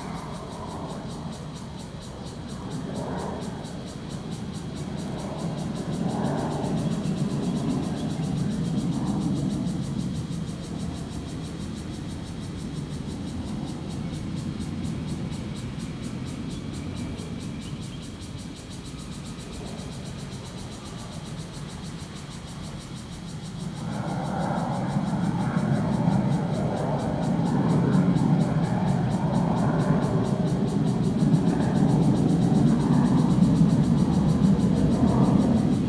主權里, Hualien City - In large trees
Birdsong, Traffic Sound, Cicadas sound, Fighter flying through
Zoom H2n MS+XY